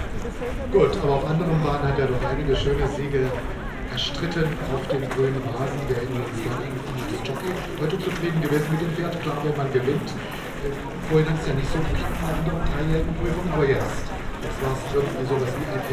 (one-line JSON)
{"title": "dahlwitz-hoppegarten: galopprennbahn - the city, the country & me: racecourse, courtyard", "date": "2013-05-05 16:22:00", "description": "award ceremony for the forth race (\"preis von abu dhabi\")\nthe city, the country & me: may 5, 2013", "latitude": "52.51", "longitude": "13.67", "altitude": "50", "timezone": "Europe/Berlin"}